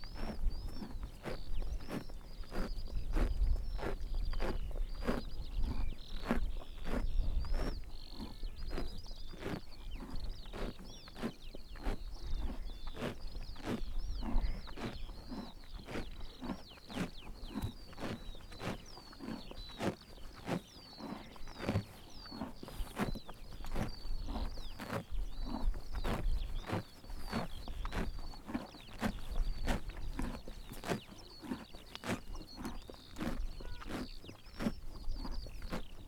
Eating horses, Penrith, UK - Eating horses
The sound of horses eating grass in the Lakes.